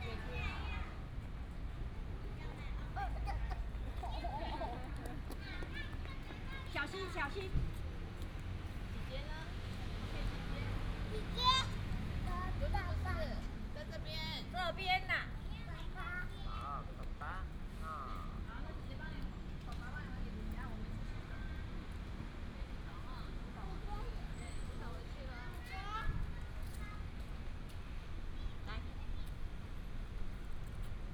迪化公園, Datong Dist., Taipei City - in the Park
in the Park, Child, The plane flew through, Traffic sound